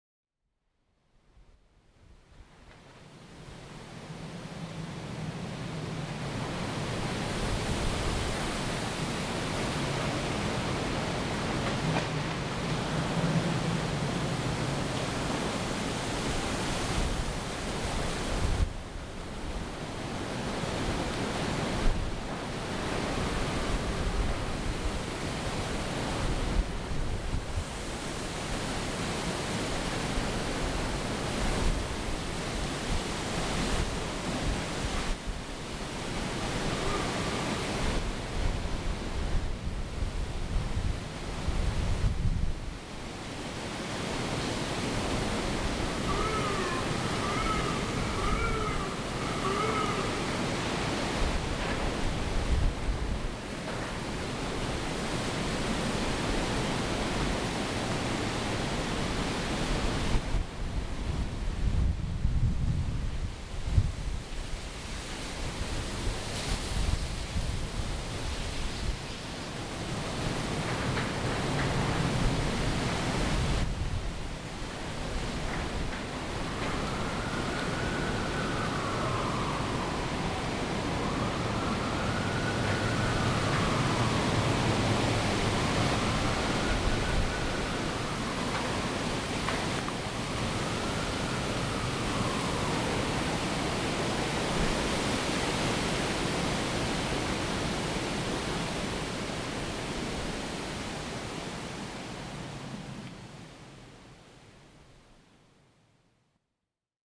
Hurricane Sandy, Fort Greene, Brooklyn, NY, USA - Hurricane Sandy
Hurricane Sandy, Brooklyn, New York. Corner of Fulton and Clermont Ave. 8:22 PM, 29 October, 2012. Wind in leaves of trees, sirens, wind whistling through buildings and wires. Metal clanking.
29 October 2012